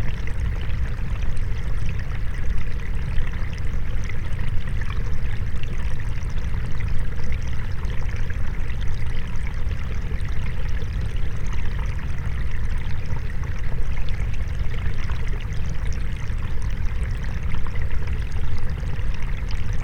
Voverynė, Lithuania, springlet underwater
Hydrophone in a springlet
Utenos apskritis, Lietuva, 21 March 2021, 15:00